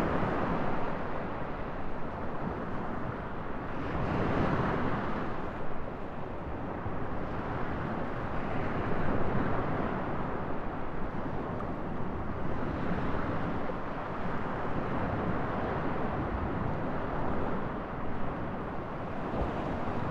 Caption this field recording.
Mediterranean Sea on the beach at noontime. Only a slight breeze. The place is called Wave-Beach by the locals, due to the sea usually building up high waves on this side of the island. Not so on this day which made recording possible. Binaural recording. Artificial head microphone set up on the ground, about four meters away from the waterline using an umbrella as windshelter. Microphone facing north west .Recorded with a Sound Devices 702 field recorder and a modified Crown - SASS setup incorporating two Sennheiser mkh 20 microphones.